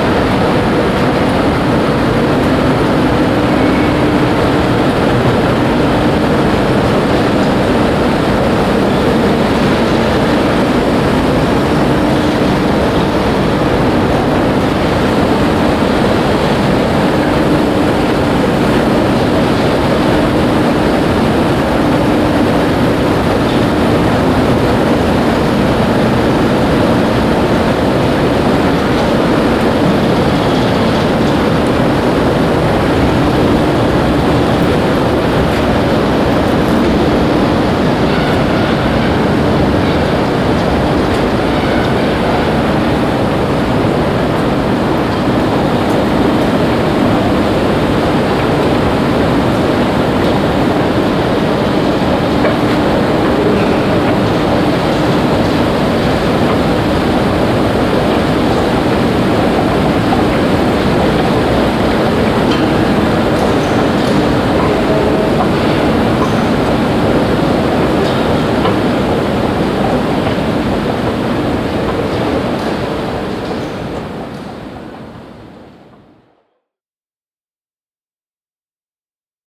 Bockenheim, Frankfurt am Main, Deutschland - frankfurt, fair, hall 9, moving staircases
Inside the fair hall 9. The sound of a big 4 level high double moving staircase rolling empty in the early morning hours.
soundmap d - topographic field recordings and social ambiences
Germany, 23 March 2012, ~07:00